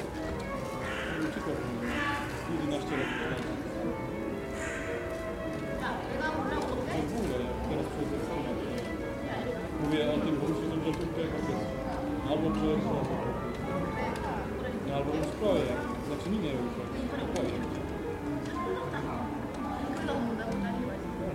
Music University students courtyard, Vienna
ambience in the courtyard of the Music University in Vienna
Vienna, Austria, June 2011